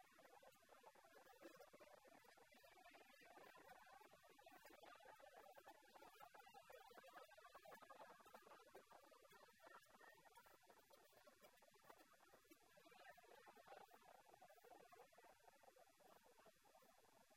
Bijapur, Gol Gumbaz, Dome
India, Karnataka, Bijapur, Gol Gumbaz, mausoleum, Dome, echoe